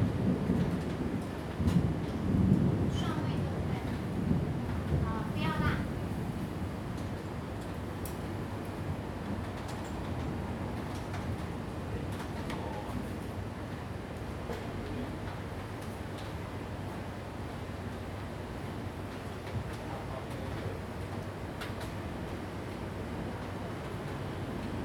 Light rain, Thunder sound, Traffic Sound
Zoom H2n MS+ XY